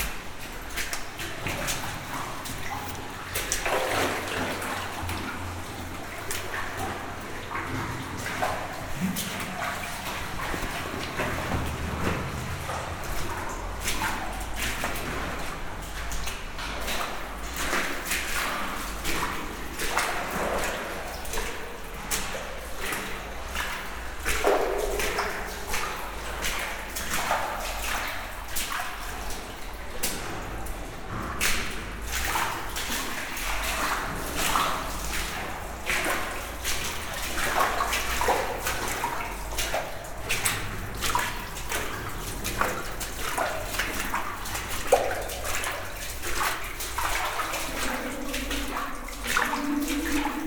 Lathuile, France - Walking in the mine
Walking into the Entrevernes mine, a very muddy place. It was a coalmine, but there's a ferriferous clay, so everything is red and so much dirty !
Entrevernes, France